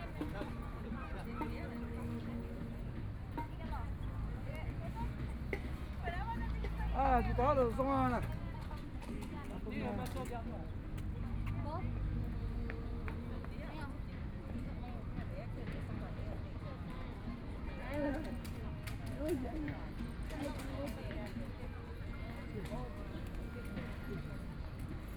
Bihu Park, Taipei City - Chat between elderly
Sitting in the park, Chat between elderly
Binaural recordings
Neihu District, Taipei City, Taiwan, 15 March 2014, 16:39